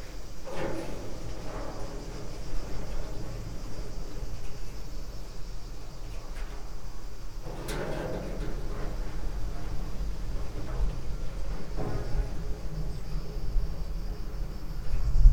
quarry, metal shed, Marušići, Croatia - void voices - stony chambers of exploitation - metal shed
tree branches on the top of metal shed, moved by wind, dry leaves inside, steps, distant thunder, cicadas ...